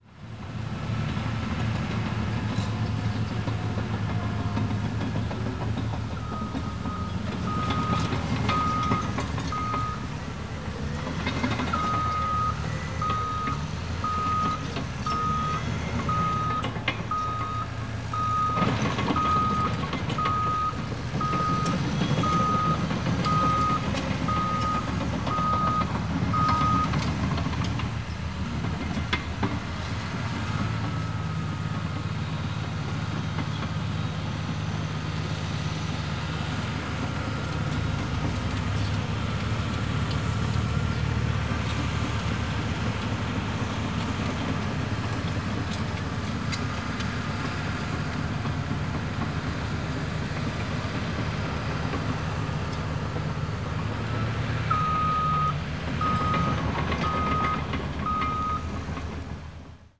Berlin, Gardens of the World, path toward Italian Garden - construction of English garden
the English garden is under construction. a bulldozer whirr behind the fence. it's working hard, pushing dirt, leveling ground. the sounds of the construction site were to be hear all around the gardens area.